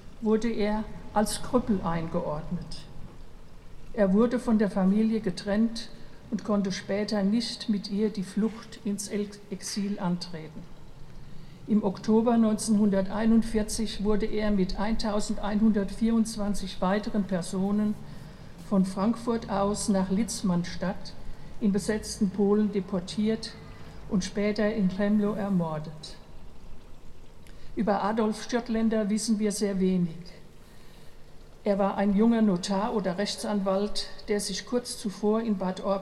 Brass band and speeches in front of the former synagoge to commemorate the progrom in 1938 that expelled the jews from the small town Bad Orb, this year with a reflection on the World War One. Part one.
Recorded with DR-44WL.
Solpl. 2, 63619 Bad Orb, Deutschland